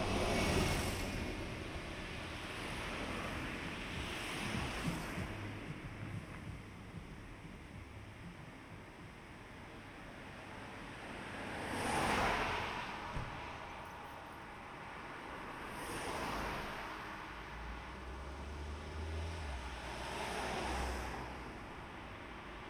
Husener Str., Paderborn, Germany - Busy Street
Binaural recording 40min